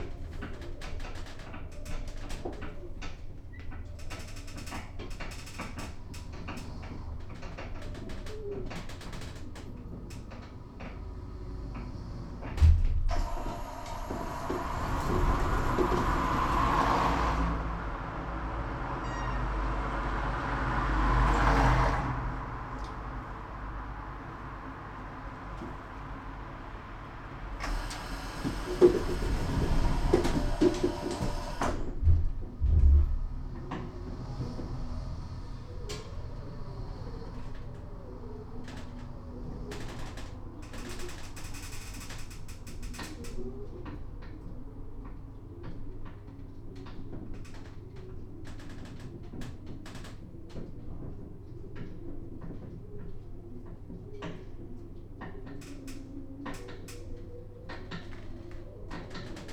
körnerstr., ubahn / subway - elevator ride
Cologne, Germany